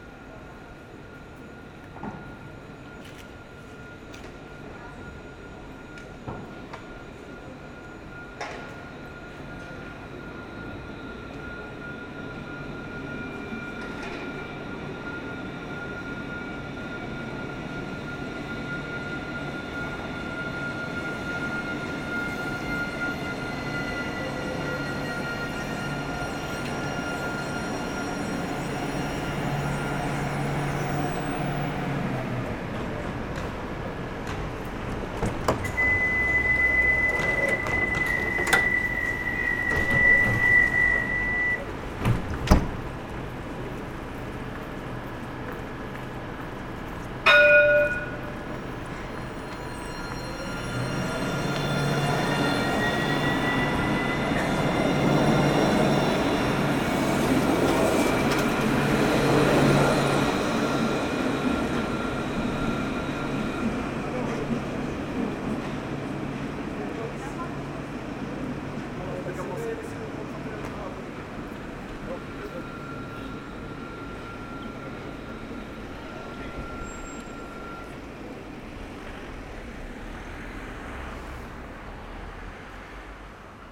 Tours, France - Tram into the main street
Into the main commercial street of Tours, tramway are passing by during a quiet morning. This tramway sound is specific to Tours city. It's not the same elsewhere.